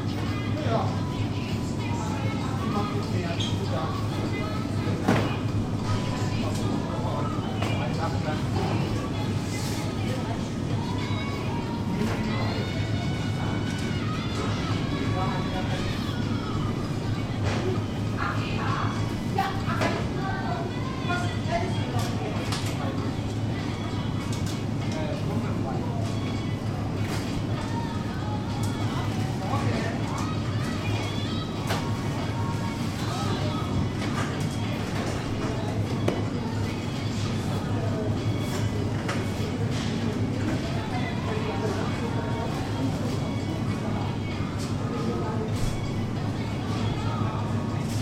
berlin, hermannplatz: warenhaus, milchprodukte - the city, the country & me: dairy products department at karstadt department store
the city, the country & me: june 2, 2008